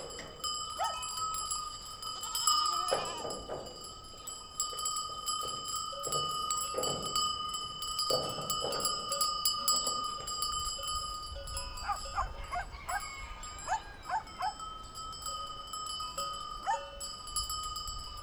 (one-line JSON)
{"title": "Montargil, Ponte de Sor Municipality, Portugal - goats and dogs-alentejo", "date": "2012-04-14 11:30:00", "description": "Goat bells and dogs barking, Foros do Mocho, Montargil, mono, rode NTG3 shotgun, Fostex FR2 LE", "latitude": "39.07", "longitude": "-8.13", "altitude": "121", "timezone": "Europe/Lisbon"}